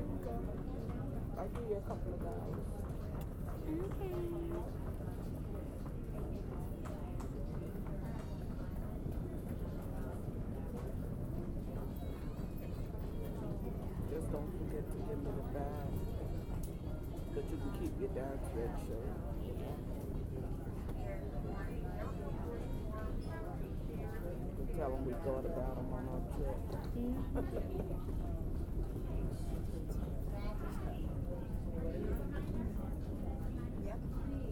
Concourse D, Georgia, USA - Waiting At The Gate
Waiting for a flight at gate D16 of the Hartsfield-Jackson Atlanta International Airport. You can hear all the typical airport sounds: lots of people walking back and forth, rolling suitcases, and various PA announcements from the surrounding gates.
This audio was captured with a special application that allows the user to disable all noise reduction and processing on the stock microphones of various android devices. The device used to capture the audio was a Moto G7 Play, and the resulting audio is surprisingly clear and lifelike. EQ was done in post to reduce some treble frequencies.